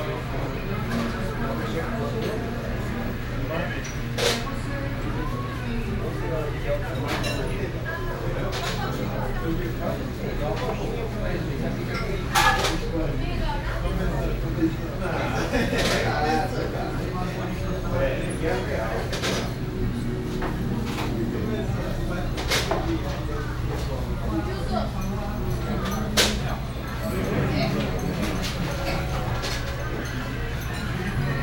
Sao Paulo, Liberdade, small restaurant
- Liberdade, São Paulo, Brazil